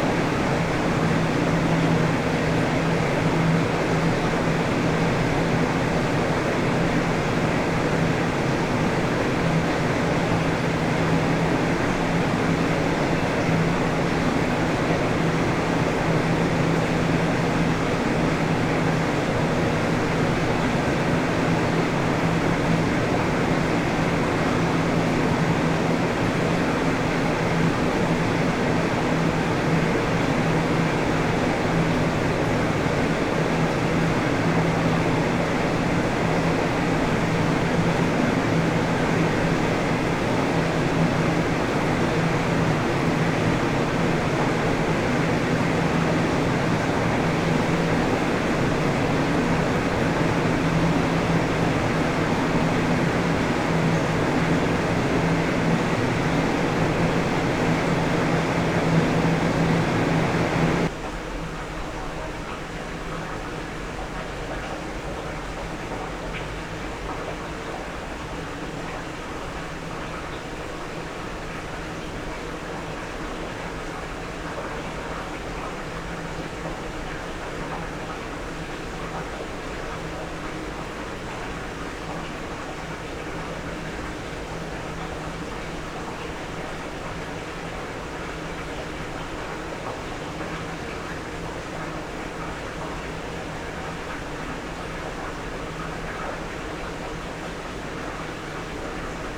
{"date": "2020-01-25 15:00:00", "description": "This tide/irrigation control gate has 5 large steel plate gates...they swing freely at the bottom end like free-reeds in a Sho or harmonica...one gate had an interesting modulating low tone happening, so I recorded the sound in the gap between the steel plate gate and the concrete housing structure of all 5 gates...", "latitude": "34.88", "longitude": "127.48", "altitude": "2", "timezone": "Asia/Seoul"}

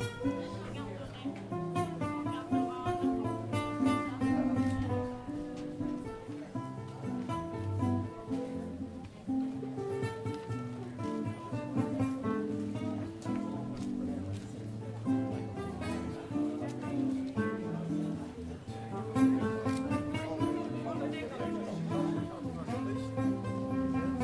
{"title": "Market day in Lourmarin", "date": "2011-09-23 12:30:00", "description": "Two singers entertaining the people on the market", "latitude": "43.76", "longitude": "5.36", "altitude": "216", "timezone": "Europe/Paris"}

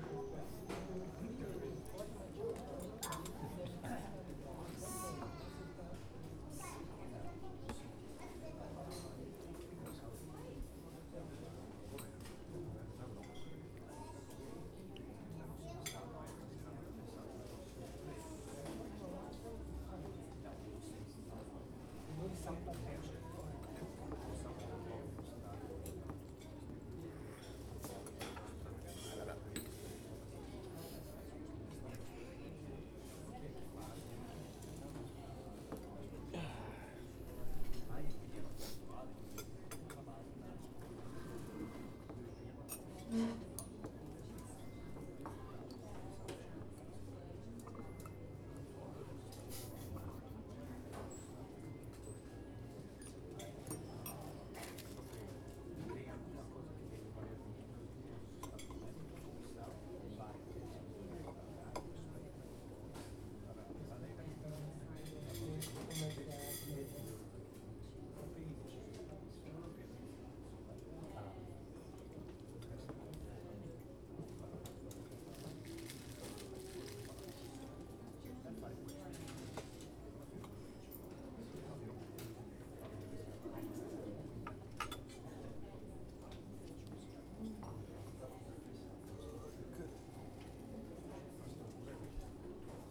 {"title": "Av. Paulista - Bela Vista, São Paulo - SP, 01311-903, Brasil - Cafeteria SESC 10h da manha - SESC Paulista", "date": "2018-09-05 10:00:00", "description": "10h da manha de uma quarta feira nublada, a cafeteria do SESC Paulista encontra-se calma e ocupada por clientes que ali se sentam para tomar seu café da manha. Os talheres, as xícaras, os copos e os pratos nao emitem som pelo ambiente a todo momento. Junto destes, a maquina de expresso e os clientes conversando ecoam pelo estabelecimento no alto de uma das avenidas mais movimentadas da capital paulista.\nGravado com o TASCAM DR-40 sobre a mesa do local, com o proprio microfone interno.", "latitude": "-23.57", "longitude": "-46.65", "altitude": "836", "timezone": "America/Sao_Paulo"}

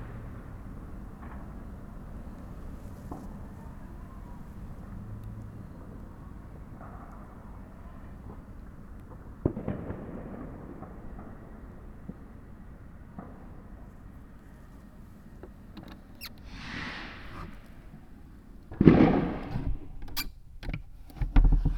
{"title": "Lange Str., Hamm, Germany - last day of year", "date": "2014-12-31 23:50:00", "description": "listening out to the bon fires at open and closed attic window", "latitude": "51.67", "longitude": "7.80", "altitude": "65", "timezone": "Europe/Berlin"}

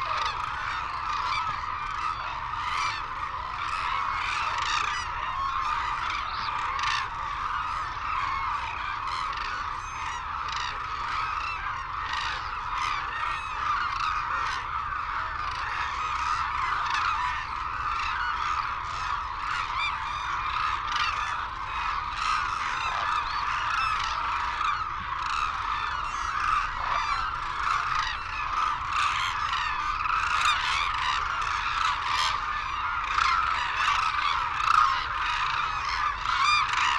{"title": "Sho, Izumi, Kagoshima Prefecture, Japan - Crane soundscape ...", "date": "2008-02-18 09:15:00", "description": "Arasaki Crane Centre ... Izumi ... calls and flight calls from white naped cranes and hooded cranes ... cold windy sunny ... background noise ... Telinga ProDAT 5 to Sony Minidisk ... wheezing whistles from youngsters ...", "latitude": "32.10", "longitude": "130.27", "altitude": "3", "timezone": "Asia/Tokyo"}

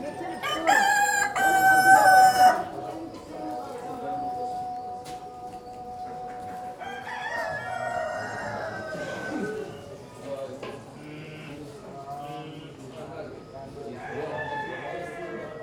{"title": "Rahba Kedima, Medina, Marrakesch - animal market", "date": "2014-02-26 16:05:00", "description": "loads of chickens and roosters, strong smell and sound. not totally sure about the location though... it's difficult to navigate and remember landmarks in the dense Medina.\n(Sony D50, OKM2)", "latitude": "31.63", "longitude": "-7.99", "timezone": "Africa/Casablanca"}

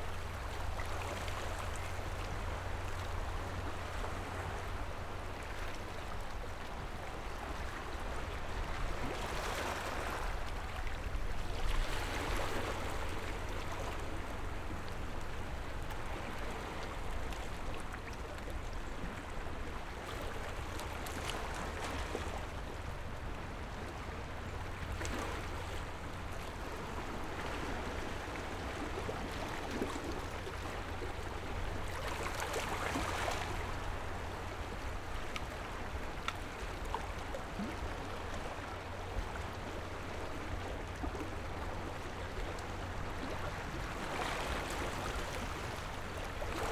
{"title": "珠螺灣, Nangan Township - Tide", "date": "2014-10-14 10:00:00", "description": "At the beach, Tide, Sound of the waves\nZoom H6 +RODE NT4", "latitude": "26.16", "longitude": "119.93", "timezone": "Asia/Taipei"}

